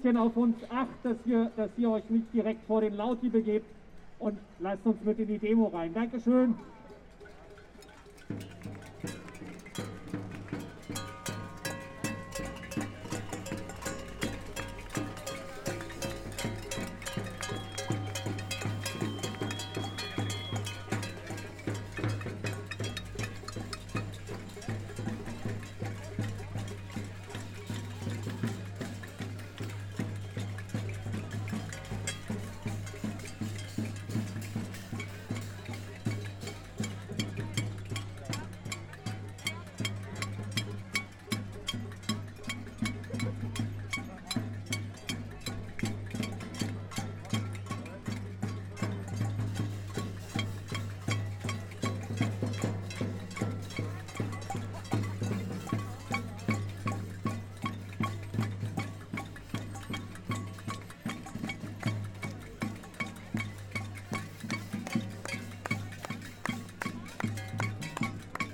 Kottbusser Straße/Hermannplatz - Mietendeckel Protest
After the federal constitutional court ruled the "Mietendeckel" (rentcap) in Berlin null, around 10.000 Berliners gathered on the same day to protest the ruling.
Recorded in the middle of the protest on a sound device recorder with Neumann KM 184 mics.
15 April 2021, 18:25